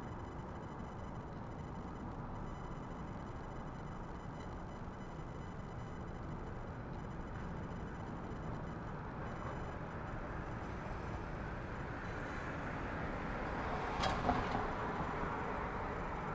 Bonis Ave, Scarborough, ON, Canada - Nightime Street
Golden Horseshoe, Ontario, Canada, September 2020